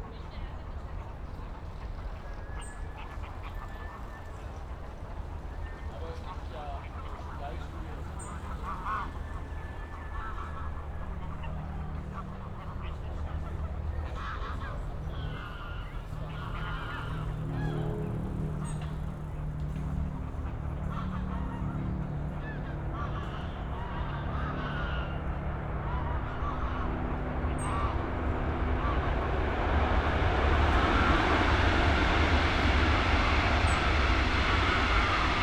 Moorlinse, Sunday afternoon in autumn, many geese gathering at the pond, cyclists and pedestrians passing by, an aircraft, a very loud car at the neary Autobahn, trains and traffic noise
(SD702, Audio Technica BP4025)
Berlin, Germany, 25 October 2020, 15:45